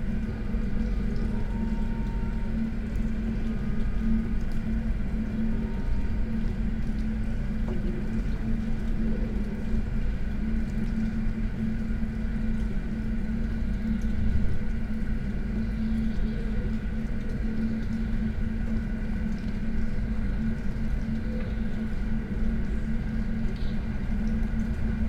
from/behind window, Mladinska, Maribor, Slovenia - trumpet, melting snow
trumpet, gas furnace, melting snow, cars